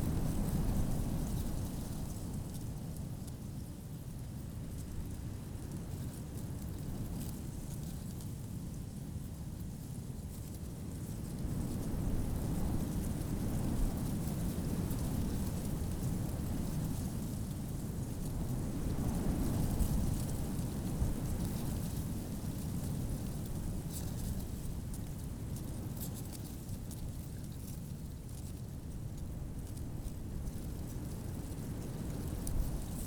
river Oder floodplain, fresh wind in a dead tree
(Sony PCM D50, DPA4060)
7 April, 16:40